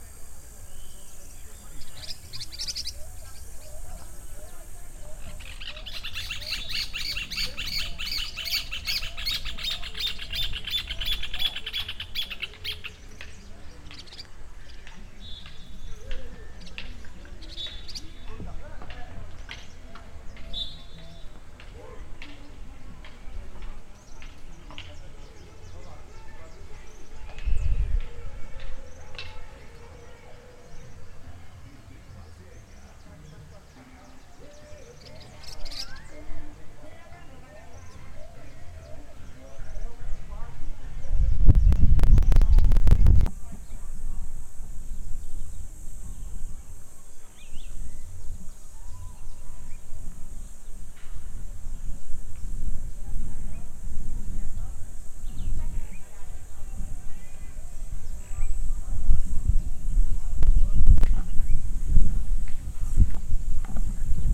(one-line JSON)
{"title": "Universidade Federal do Recôncavo da Bahia - Avenida Alberto Passos, 294 - Centro, BA, 44380-000 - Quadra da UFRB. Area de Lazer Estudantil", "date": "2014-03-08 10:18:00", "description": "Captação feita com base da disciplina de Som da Docente Marina Mapurunga, professora da Universidade Federal do Recôncavo da Bahia, Campus Centro de Artes Humanidades e Letras. Curso Cinema & Audiovisual. CAPTAÇÃO FOI FEITA COM UM PCM DR 50. QUADRA DA UFRB, AINDA EM CONSTRUÇÃO, TINHA UNS NINJAS TREINANDO LÁ NO MOMENTO, EM CRUZ DAS ALMAS-BAHIA.", "latitude": "-12.66", "longitude": "-39.09", "timezone": "America/Bahia"}